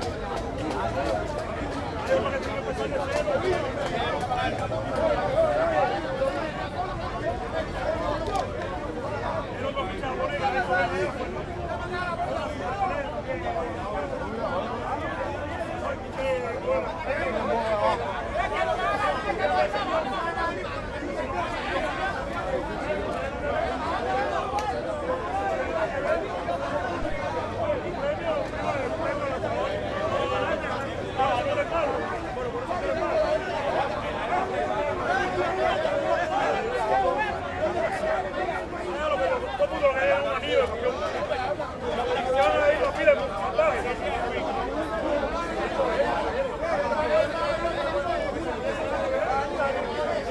Parque Centrale, Havana, Cuba - Béisbol discussions
In Havana's Parque Central opposite Hotel Inglaterra, many men having heated discussions about béisbol.